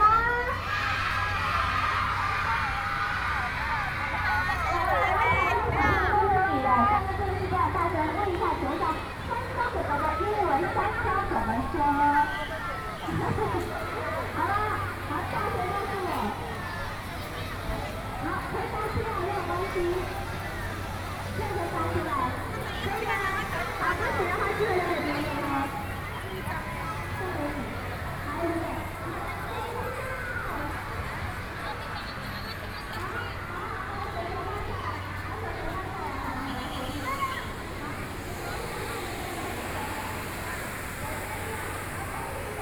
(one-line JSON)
{"title": "Taipei EXPO Park - Fair", "date": "2012-09-29 14:42:00", "description": "Fair, Young children whoop, Sony PCM D50 + Soundman OKM II, Best with Headphone( SoundMap20120929- 20)", "latitude": "25.07", "longitude": "121.52", "altitude": "7", "timezone": "Asia/Taipei"}